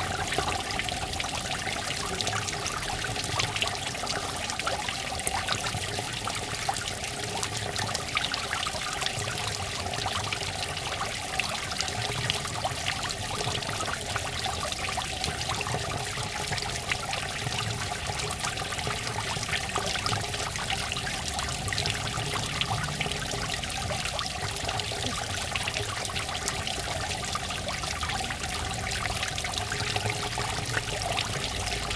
teltow, marktplatz: brunnen - the city, the country & me: fountain
the city, the country & me: october 1, 2011